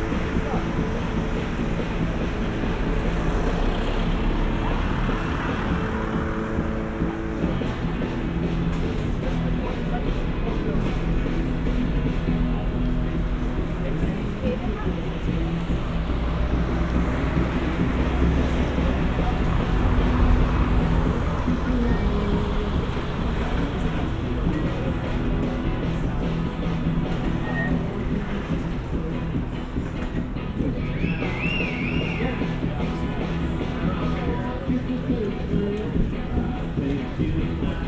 Berlin: Vermessungspunkt Friedelstraße / Maybachufer - Klangvermessung Kreuzkölln ::: 21.06.2012 ::: 22:55